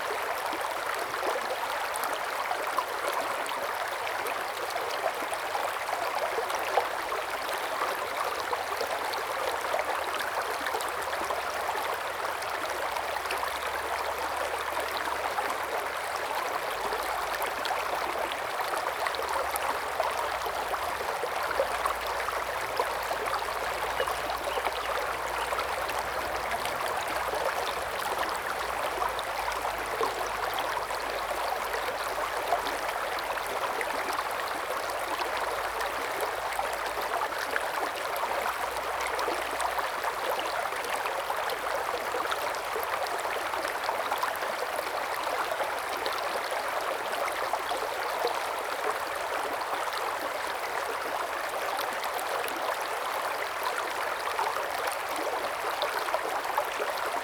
中路坑溪, 埔里鎮桃米里 - Stream
Stream
Zoom H2n MS+XY